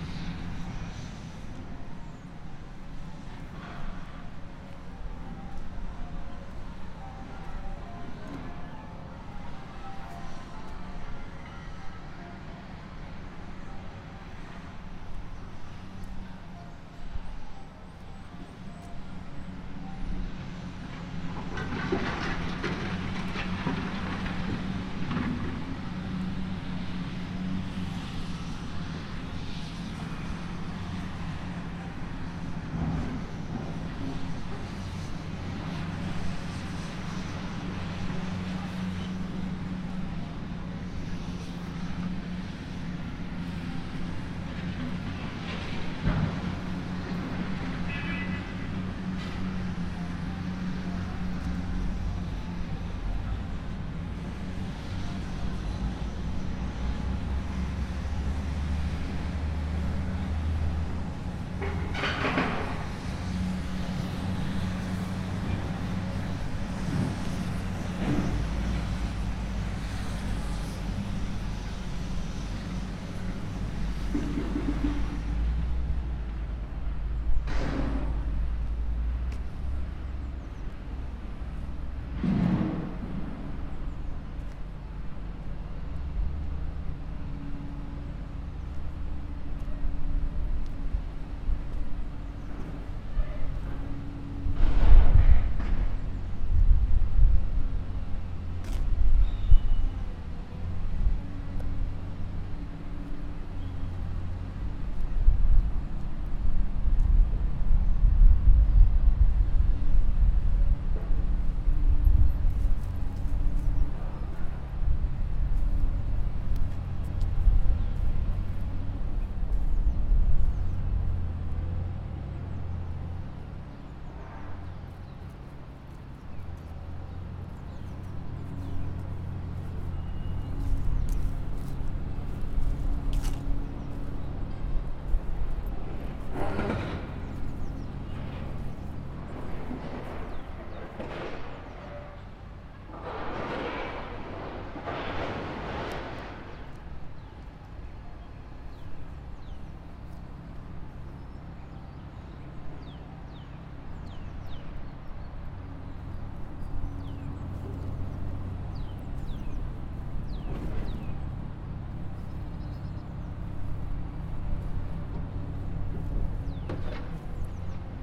{
  "title": "Bingley, West Yorkshire, UK - Tearing down the Bradford & Bingley",
  "date": "2015-02-04 12:20:00",
  "description": "They're demolishing the Bradford & Bingley headquarters, which has sat empty since the economic crash in 2008. The building has dominated the centre of town like a sad reminder of the old times, it's clock stuck at 13.35. Meanwhile, bells ring and birds sing.",
  "latitude": "53.85",
  "longitude": "-1.84",
  "altitude": "98",
  "timezone": "Europe/London"
}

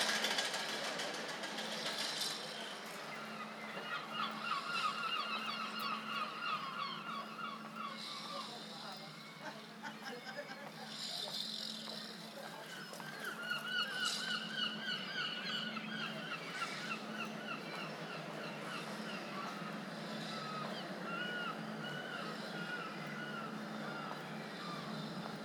{
  "title": "Hastings Old Town, East Sussex, UK - Fun park Coastal Currents 2011",
  "date": "2011-09-02 13:30:00",
  "description": "I walked around the fun park in Hastings' Stade area from the boating lake to the Lifeboat station. Sound gathering for a live audio collage piece at the end of the festival.",
  "latitude": "50.86",
  "longitude": "0.59",
  "timezone": "Europe/London"
}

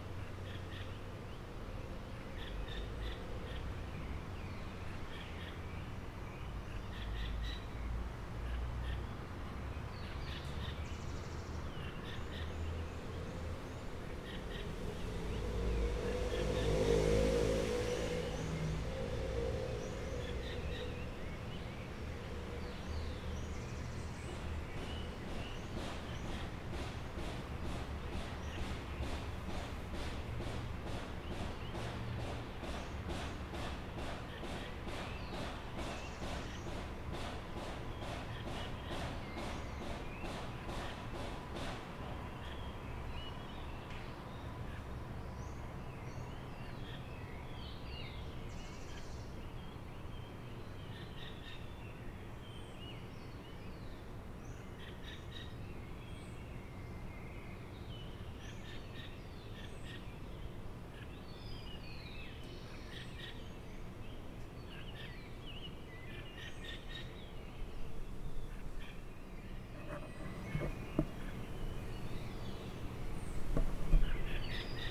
{
  "title": "Cl., Bogotá, Colombia - Covid sunrise",
  "date": "2020-05-26 04:47:00",
  "description": "Sunrise, May 26th 2020, during the COVID-19 quarantine. Lockdown had been eased, that is why your can hear cars passing by. But the bird songs are clear, and stronger than pre-quaratine times.",
  "latitude": "4.64",
  "longitude": "-74.09",
  "altitude": "2553",
  "timezone": "America/Bogota"
}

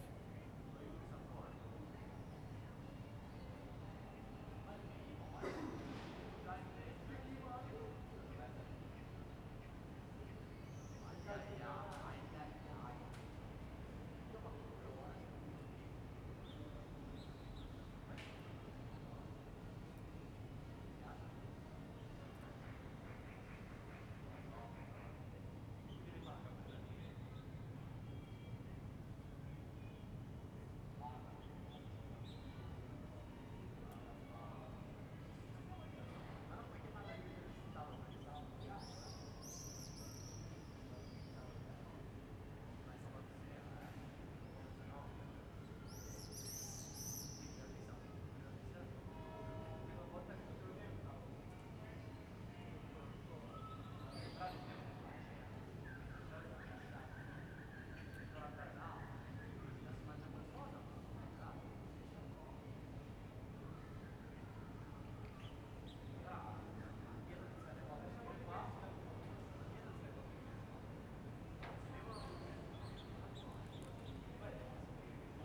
{
  "date": "2021-06-11 18:57:00",
  "description": "\"Round seven p.m. terrace with barking Lucy, organ, voices, and bells in the time of COVID19\": soundscape.\nChapter CLXXIV of Ascolto il tuo cuore, città. I listen to your heart, city\nFriday, June 11th, 2021. Fixed position on an internal terrace at San Salvario district Turin. An electronic organ is playing, the bells ring out and Lucy barks as is her bad habit. More than one year and two months after emergency disposition due to the epidemic of COVID19.\nStart at 6:57: p.m. end at 7:35 p.m. duration of recording 36’28”",
  "latitude": "45.06",
  "longitude": "7.69",
  "altitude": "245",
  "timezone": "Europe/Rome"
}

